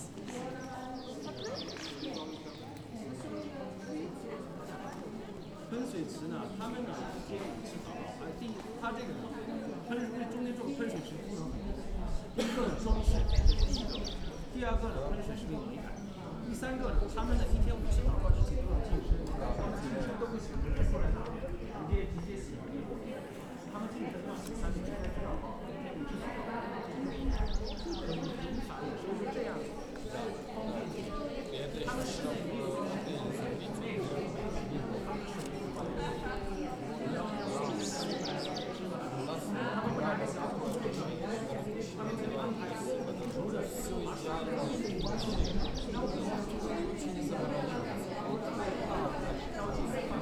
{
  "title": "Avenue Taoulat El Miara, Marrakech, Morocco - Palais Bahia.",
  "date": "2018-03-13 11:19:00",
  "description": "Grande Cour dite \"Cour d'honneur\". Des oiseaux et des touristes",
  "latitude": "31.62",
  "longitude": "-7.98",
  "altitude": "472",
  "timezone": "Africa/Casablanca"
}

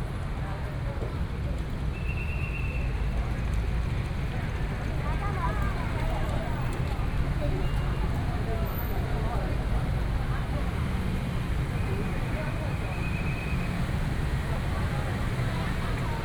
{
  "title": "內湖區紫陽里, Taipei City - walking on the Road",
  "date": "2014-04-12 21:35:00",
  "description": "walking on the Road, Traffic Sound, Distance came the sound of fireworks\nPlease turn up the volume a little. Binaural recordings, Sony PCM D100+ Soundman OKM II",
  "latitude": "25.08",
  "longitude": "121.58",
  "altitude": "16",
  "timezone": "Asia/Taipei"
}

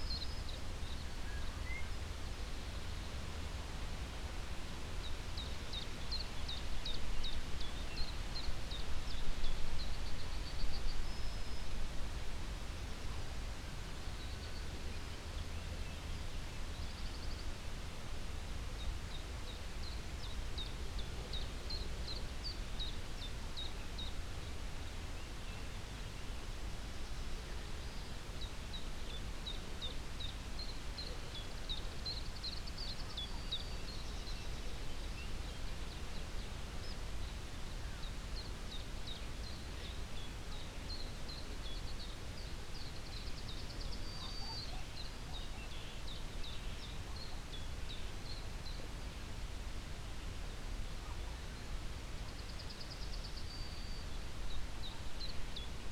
{
  "title": "Aukštadvario seniūnija, Litauen - Lithuania, farm house, terrace stairways, morning time",
  "date": "2015-07-02 06:30:00",
  "description": "Sitting at the terrace stairways of the main house in the morning time. The sounds of the morning birds, a mellow wind crossing the downhill fields, a deer crossing the fields in the distance, a nearly inaudible plane crossing the sky.\ninternational sound ambiences - topographic field recordings and social ambiences",
  "latitude": "54.63",
  "longitude": "24.65",
  "altitude": "168",
  "timezone": "Europe/Vilnius"
}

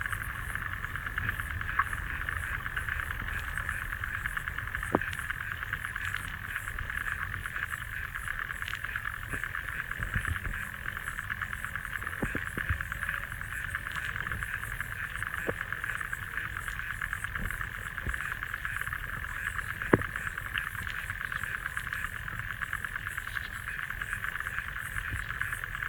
{
  "title": "lake Politiskiai, Lithuania, bridge underwater",
  "date": "2020-06-13 14:40:00",
  "description": "hydrophone right under small bridge",
  "latitude": "55.46",
  "longitude": "25.78",
  "altitude": "185",
  "timezone": "Europe/Vilnius"
}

